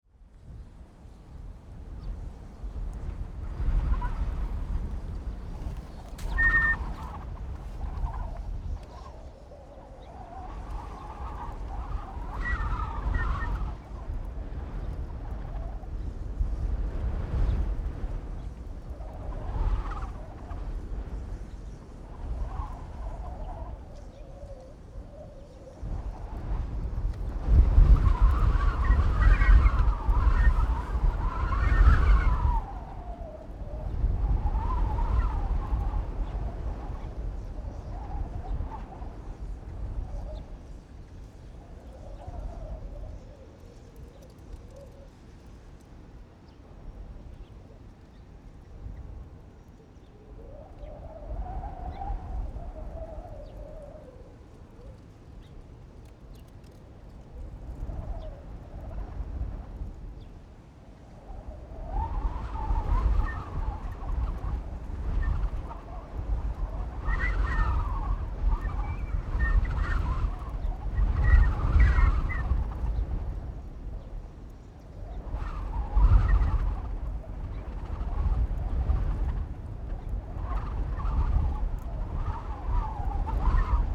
Wind, In the parking lot
Zoom H6+Rode NT4